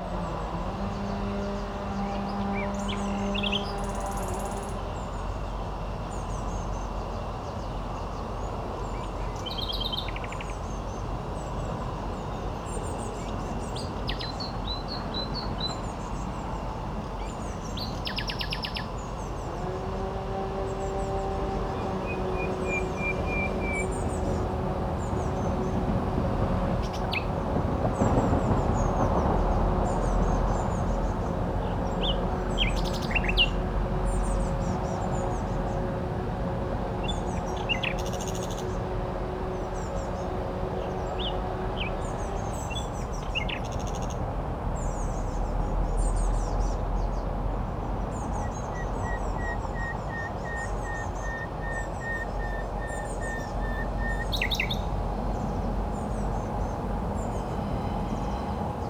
Hauptstraße, Berlin, Germany - Nightingale with heavy traffic
I'm surprised how close to the busy Hauptstrasse this Nightingale is prepared to live.